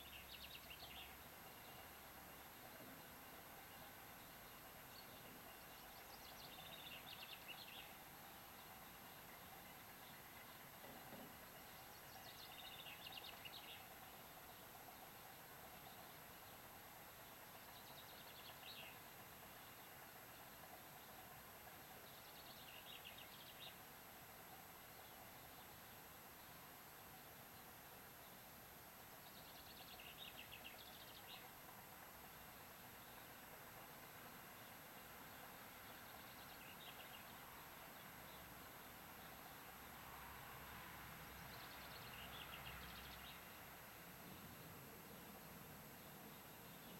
Les Vans, France - Birds, pneumatic-drill, cars and plane
France métropolitaine, European Union, 4 April